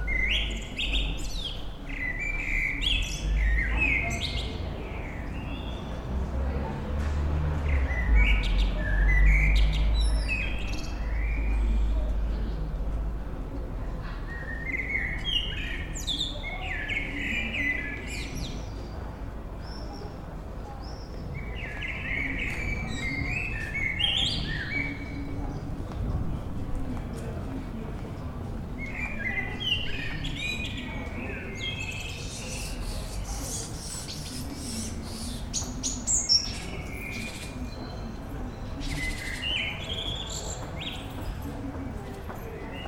bonn altstadt, amsel - abendstimmung, mai 2003